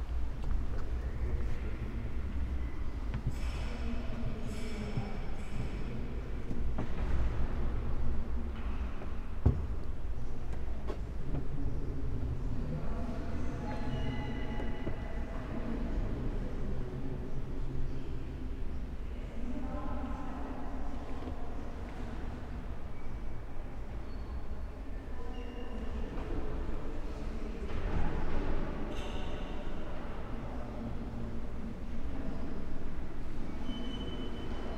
Marienkirche, Berlin, Germany - nave
slow walk with few stops through the church